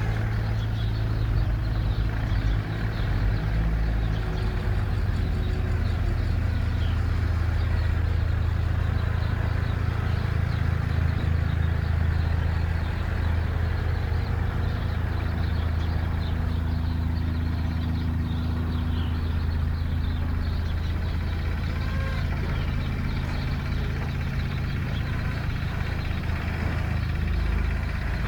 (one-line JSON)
{"title": "Sucharskiego, Szczecin, Poland", "date": "2010-10-18 15:10:00", "description": "Sounds from three working excavators.", "latitude": "53.42", "longitude": "14.52", "altitude": "30", "timezone": "Europe/Warsaw"}